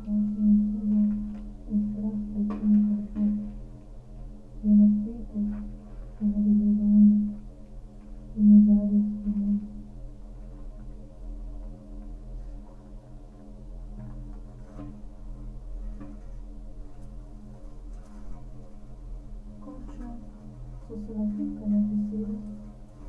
quarry, Marušići, Croatia - void voices - stony chambers of exploitation - borehole
winter, slow walk around while reading from strips of paper